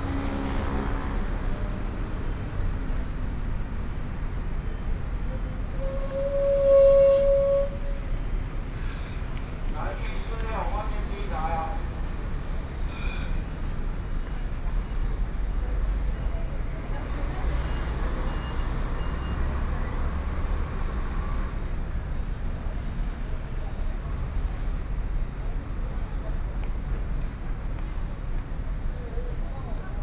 {"title": "Taichung Bus Stop, Taichung - Bus stop", "date": "2013-10-08 11:05:00", "description": "Public broadcasting station, Traffic Noise, Zoom H4n+ Soundman OKM II", "latitude": "24.14", "longitude": "120.68", "altitude": "86", "timezone": "Asia/Taipei"}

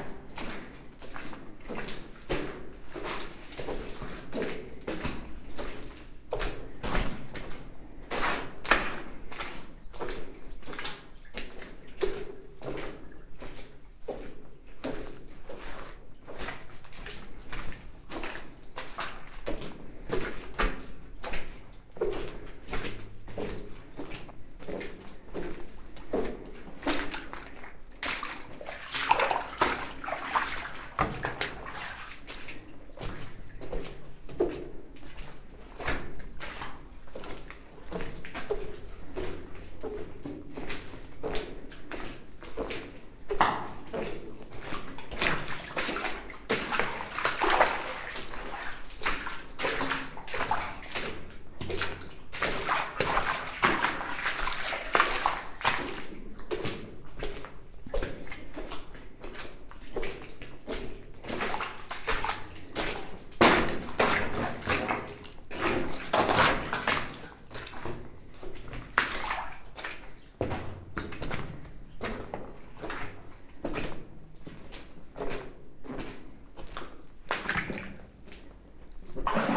{"title": "Volmerange-les-Mines, France - Walking in the mine", "date": "2016-10-08 12:30:00", "description": "Walking in the old mine, into the mud, the water and the old stones.", "latitude": "49.44", "longitude": "6.08", "altitude": "356", "timezone": "Europe/Paris"}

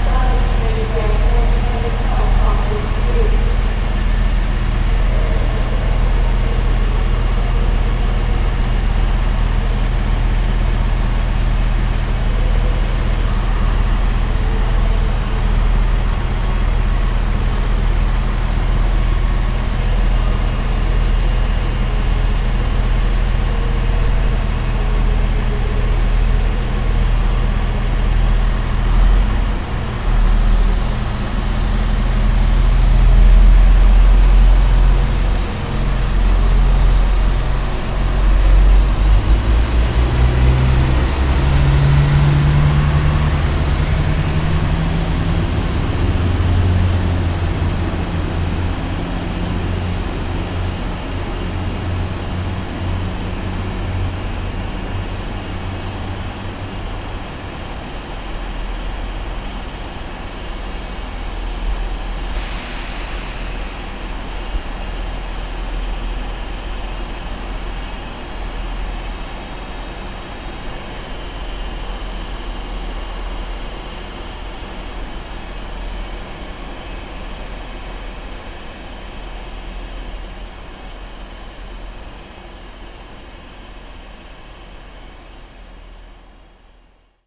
{"title": "dresden, main station, track 2, regio train departure", "date": "2009-06-18 11:06:00", "description": "regio train - diesel engine sound, waiting and departure\nsoundmap d: social ambiences/ in & outdoor topographic field recordings", "latitude": "51.04", "longitude": "13.73", "altitude": "125", "timezone": "Europe/Berlin"}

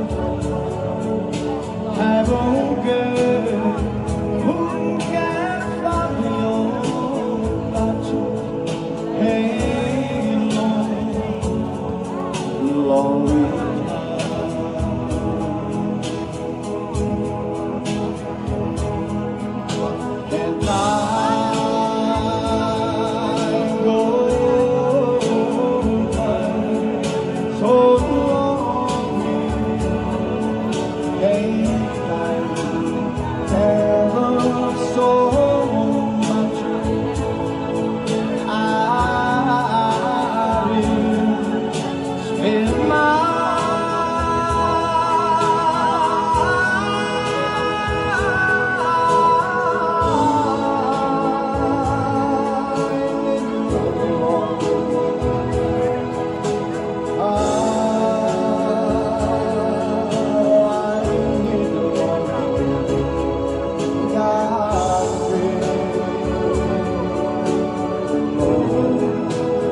{"title": "Piazza Ospedaletto, Massa MS, Italia - Dedicato al Borgo del Ponte", "date": "2017-08-14 20:12:00", "description": "\"Oh, my love, my darling\nI've hungered for your touch\nA long, lonely time\nTime goes by so slowly\nAnd time can do so much\nAre you still mine?\nI need your love\"\nSulle note di Unchained Melody, come in un film di Scorsese, si apre la festa del quartiere, il 12 Agosto 2017", "latitude": "44.04", "longitude": "10.14", "altitude": "62", "timezone": "Europe/Rome"}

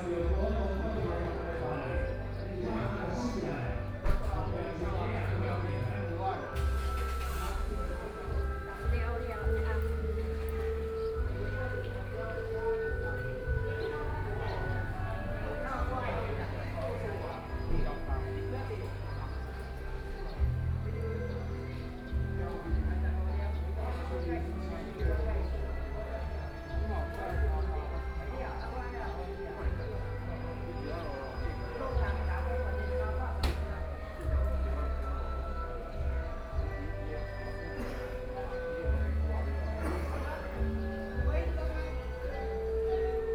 {"title": "三星鄉天山村, Yilan County - Funeral", "date": "2014-07-25 15:27:00", "description": "Funeral, Rainy Day, Small village, Traffic Sound\nSony PCM D50+ Soundman OKM II", "latitude": "24.66", "longitude": "121.62", "altitude": "136", "timezone": "Asia/Taipei"}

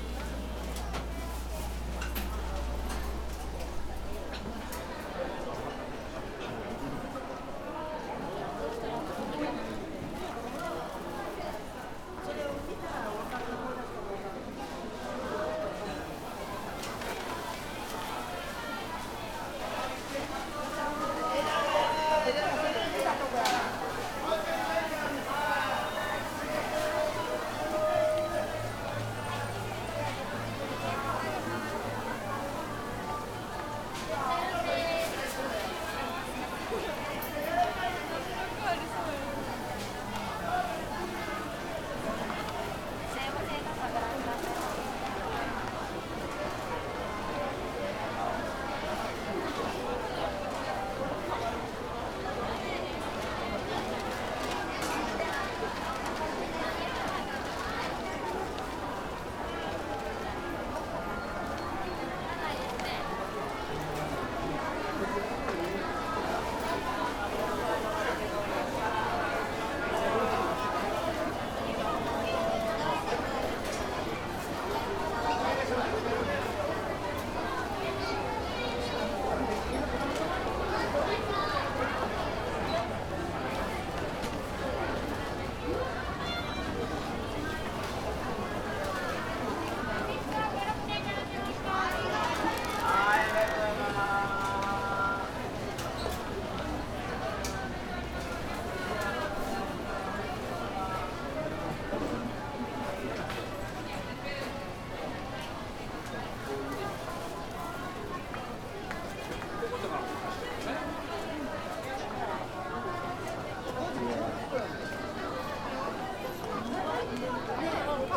{"title": "Osaka northern downtown, Umeda district, Hanshin deparment store, level - level b1", "date": "2013-03-31 17:52:00", "description": "sonic atmosphere of the grocery store in the basement of one of the department stores. vendors calling to buy their products, a river of customers, a vortex of sounds.", "latitude": "34.70", "longitude": "135.50", "altitude": "18", "timezone": "Asia/Tokyo"}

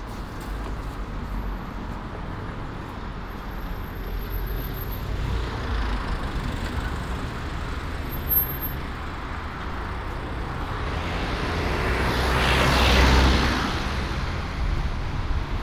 {"title": "Ascolto il tuo cuore, città, I listen to your heart, city, Chapter CXXXII - \"Two years after the first soundwalk in the time of COVID19\": Soundwalk", "date": "2022-03-10 06:48:00", "description": "\"Two years after the first soundwalk in the time of COVID19\": Soundwalk\nChapter CLXXXVIII of Ascolto il tuo cuore, città. I listen to your heart, city\nThursday, March 10th, 2022, exactly two years after Chapter I, first soundwalk, during the night of closure by the law of all the public places due to the epidemic of COVID19.\nThis path is part of a train round trip to Cuneo: I have recorded the walk from my home to Porta Nuova rail station and the start of the train; return is from inside Porta Nuova station back home.\nRound trip are the two audio files are joined in a single file separated by a silence of 7 seconds.\nfirst path: beginning at 6:58 a.m. end at 7:19 a.m., duration 20’33”\nsecond path: beginning at 6:41 p.m. end al 6:54 p.m., duration 13’24”\nTotal duration of recording 34’04”\nAs binaural recording is suggested headphones listening.\nBoth paths are associated with synchronized GPS track recorded in the (kmz, kml, gpx) files downloadable here:\nfirst path:\nsecond path:", "latitude": "45.06", "longitude": "7.68", "altitude": "248", "timezone": "Europe/Rome"}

